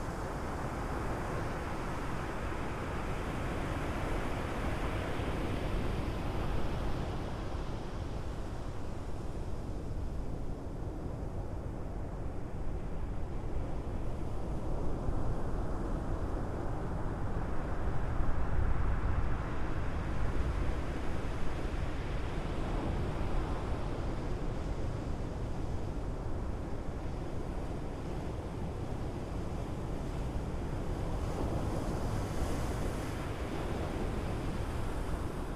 Waves breaking on the beach in Porto, Portugal, 03/01/2007